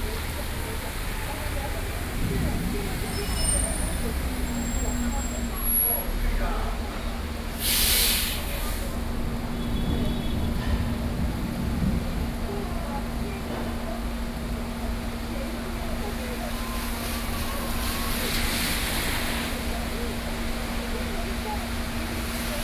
In front of the entrance convenience stores, Sony PCM D50 + Soundman OKM II
信義區, 台北市 (Taipei City), 中華民國